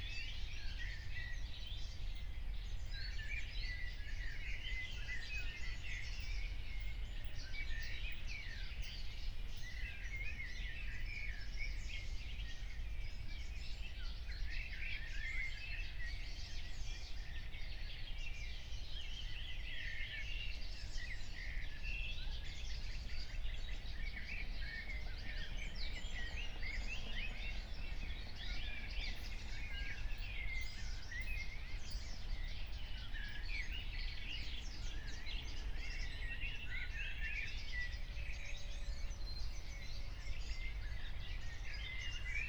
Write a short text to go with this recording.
03:45 Berlin, Wuhletal - Wuhleteich, wetland